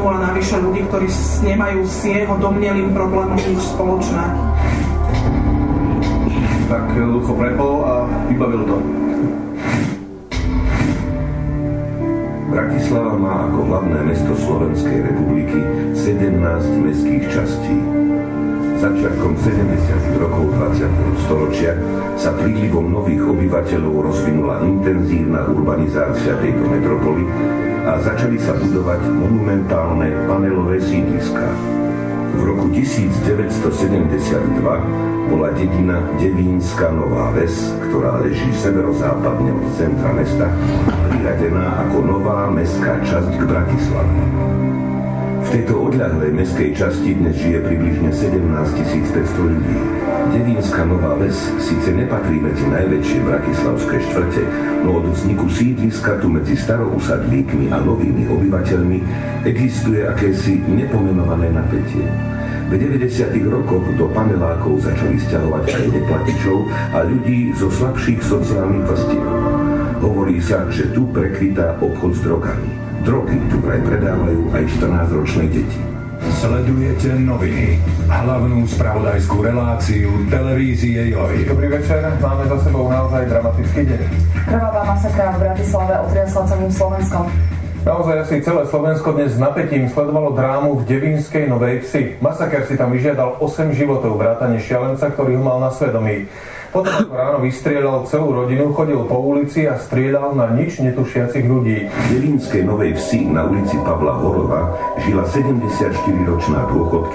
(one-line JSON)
{"title": "devinska nova ves, u. pavla horova", "date": "2011-10-02 11:52:00", "description": "screening of the staged documentarz film about", "latitude": "48.20", "longitude": "16.98", "altitude": "166", "timezone": "Europe/Vienna"}